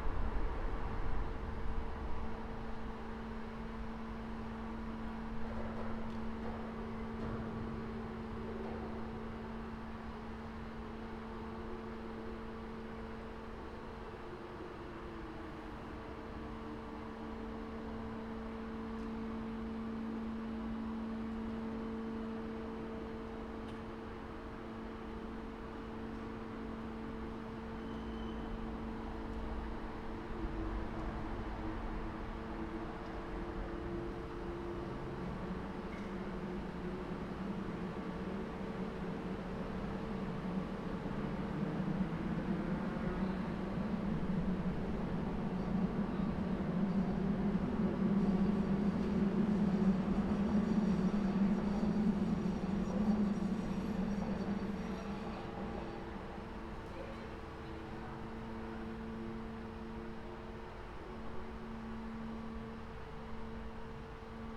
Nussdorfer Schleuse - Nussdorfer Lock - Opening and closing of Nussdorfer Lock for MS Vienna
Recorded at Anton Schmid Promenade under Nussdorfer Schleusen Bridge with a Zoom H1 and dead kitten. ship pass by around 15:00.
28 September 2012, 17:58